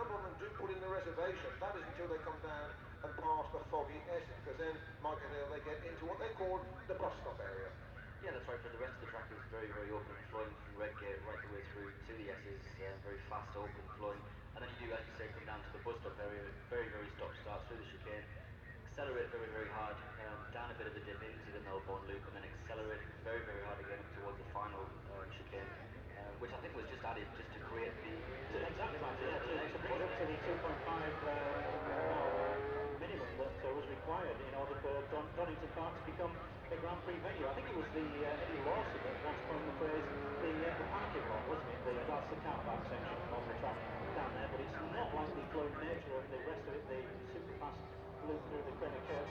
Unnamed Road, Derby, UK - British Motorcycle Grand Prix 2005 ... free practice two ...
British Motorcycle Grand Prix 2005 ... free practice two ... part one ... the 990cc era ... one point stereo mic to minidisk ...